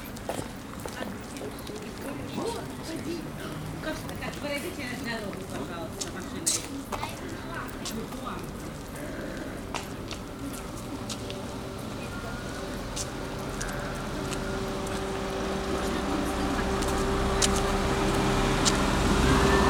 Vulytsya Festyvalʹna, Slavutych, Kyivska oblast, Ukrajina - Workers returning to the housing estate